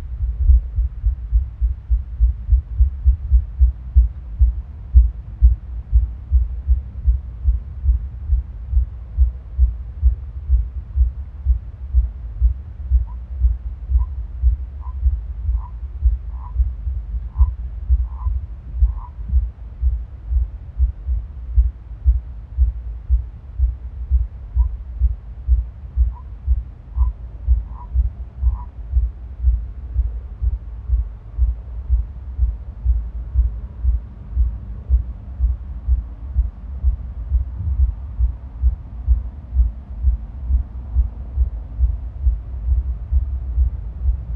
Pergola, Malvern, UK - Malvern, Worcestershire, UK
From an overnight recording using a very inexpensive contact microphone secured under the roof of my garden pergola. Directly above is a hen mallard incubating eggs. Very luckily the mic must be under her body registering the pulse. The planking is 10mm thick. Notice how quicly her heart changes pace. Strangely other sounds are picked up too. Possibly the wooden roof is acting as a diaphragm as well as a conductor. You may have to increase the volume to hear this recording well. I am hoping to record the eggs hatching later around 17th April.